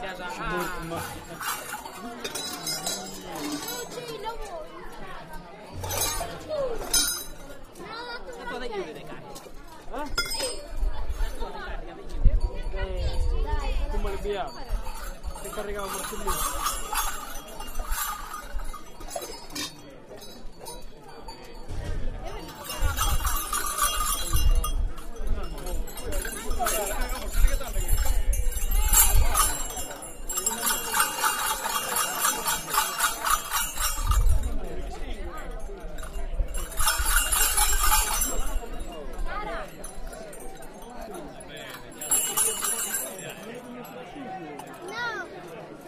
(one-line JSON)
{"title": "Marco Lampis- Mamoiada: after the Parade: voices and bells", "latitude": "40.22", "longitude": "9.28", "altitude": "642", "timezone": "GMT+1"}